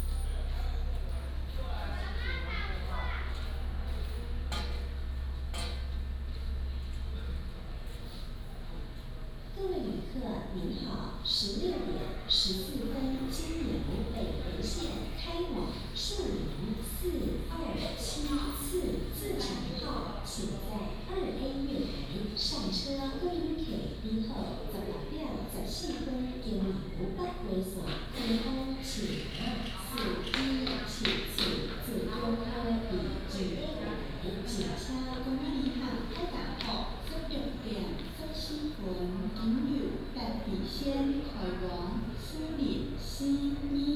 Ruisui Station, Rueisuei Township - In the station lobby
In the station lobby